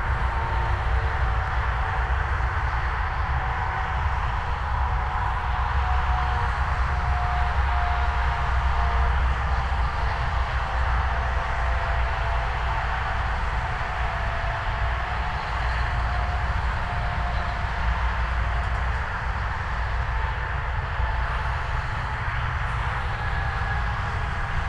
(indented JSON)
{
  "title": "Manheim, motorway - drone of the A4",
  "date": "2013-08-27 19:50:00",
  "description": "sonic energy (and violence) of the A4 motorway near village Manheim. The motorway will be moved 3km south because the nearby opencast mine Tagebau Hambach requires the area, including the village. as of today, the new Autobahn is build already and seems functional soon.\n(Sony PCM D50, DPA4060)",
  "latitude": "50.89",
  "longitude": "6.60",
  "altitude": "88",
  "timezone": "Europe/Berlin"
}